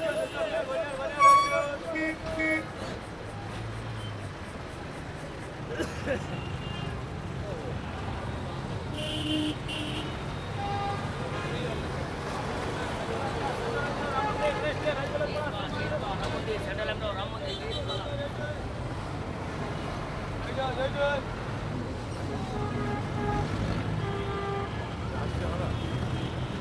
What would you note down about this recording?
Calcutta - Park-Street, Park Street a une petite particularité. Elle est en sens unique mais celui-ci change au cours de la journée. Ambiance de rue.